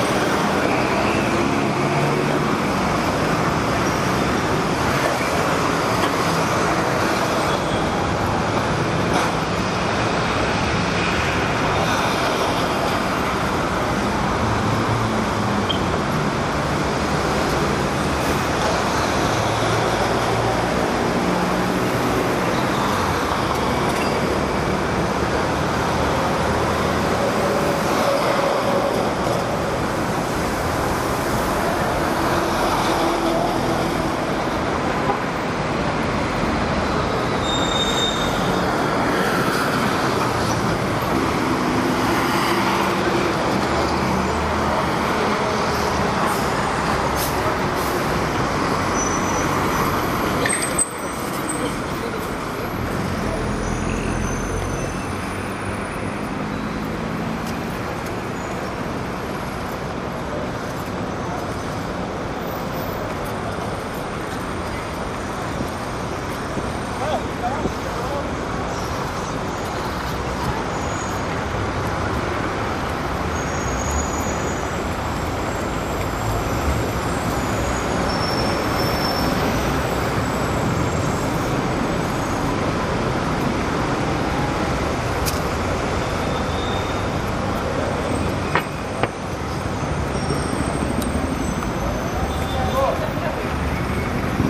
Av. Rio Branco, RJ. - Av. Rio Branco
Av. Rio Branco, height Teatro Municipal. -- Av. Rio Branco, altura do Teatro Municipal.